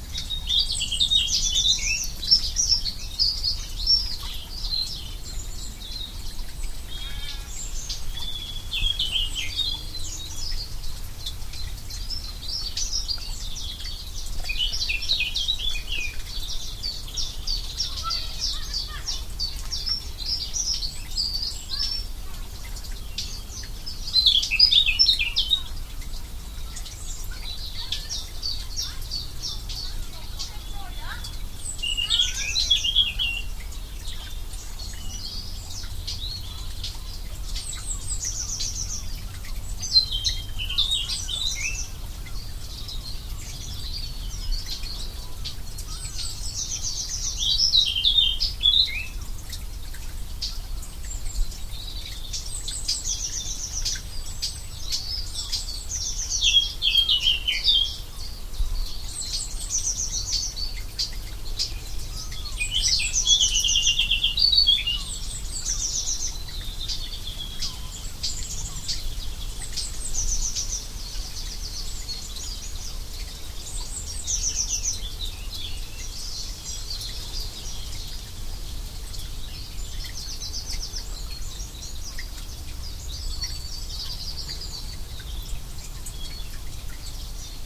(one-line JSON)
{"title": "corner Barr Lane, Chickerell, by stream", "date": "2011-04-10 14:48:00", "description": "spring, stream, birds, Barr Lane, Chickerell", "latitude": "50.63", "longitude": "-2.51", "altitude": "18", "timezone": "Europe/London"}